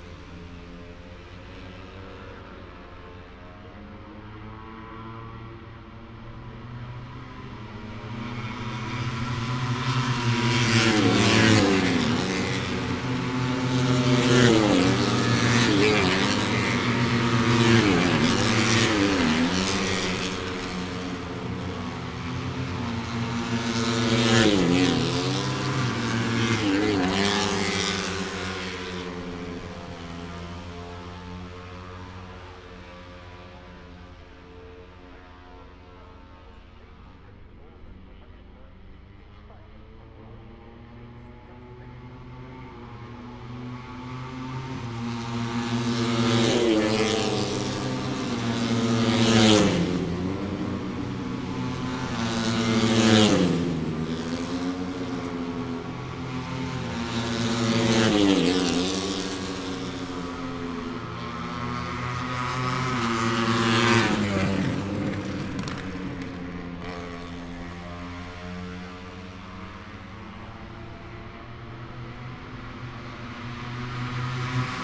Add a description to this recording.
Moto three ... Free practice one ... International Pit Straight ... open lavalier mics on T bar ...